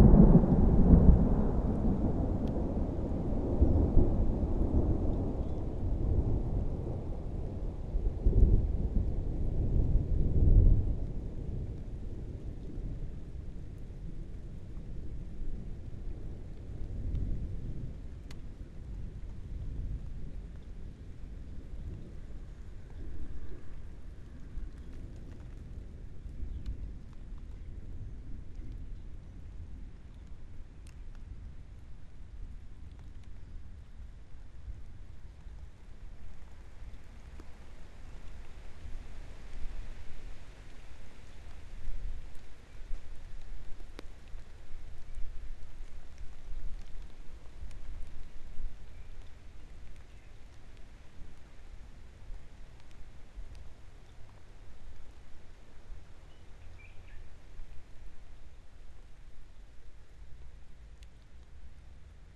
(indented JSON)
{
  "title": "Ave, Linden, Randburg, South Africa - Highveld Thunderstorm",
  "date": "2020-04-14 16:36:00",
  "description": "Garden at home. EM172 capsules on small polycarbonate disc with wind protection to a SD702 recorder. Mounted on a tripod about 1200mm above ground level.",
  "latitude": "-26.14",
  "longitude": "28.00",
  "altitude": "1624",
  "timezone": "Africa/Johannesburg"
}